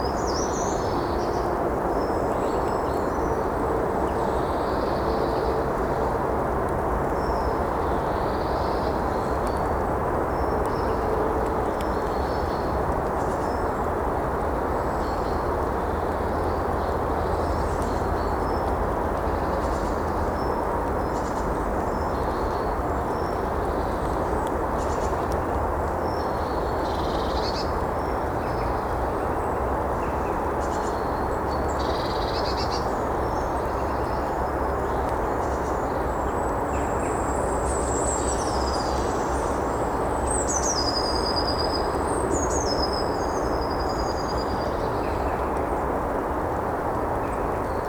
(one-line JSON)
{"title": "Ranst, Belgium - zevenbergen bos", "date": "2017-03-04 06:55:00", "description": "recorded with H4n and 2 AKG C1000 originally for quadrofonic listenening", "latitude": "51.20", "longitude": "4.56", "altitude": "25", "timezone": "Europe/Brussels"}